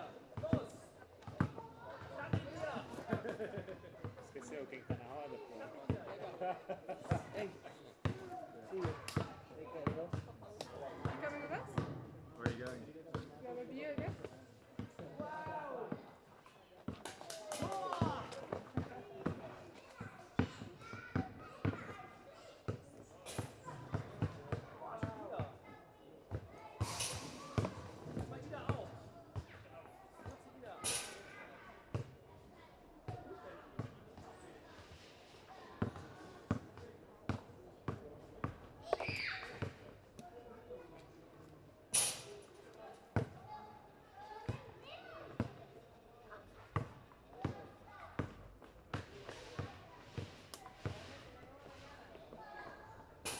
Recorded with a Zoom H5. Placed on the side of the court with the Basketball hoops on the left and right.
Children's playground is on the other side of the court.
Berlin, Germany, 16 August 2018